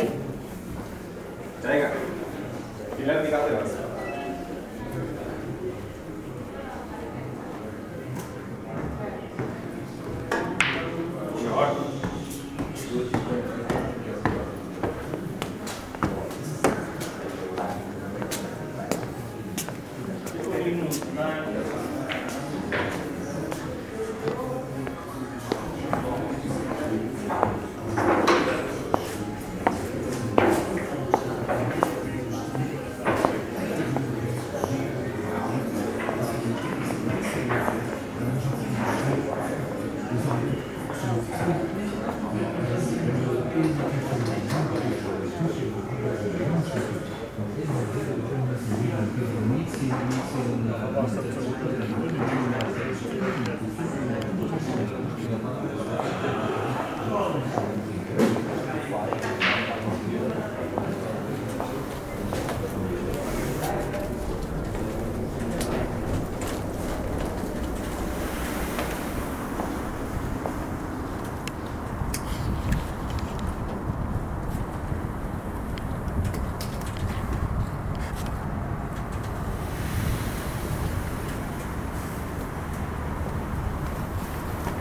Diegem, Machelen, Belgium - at the hotel hall
holiday Inn hotel: wlking around: hall, bar, snooker pool and exit. multlingual environment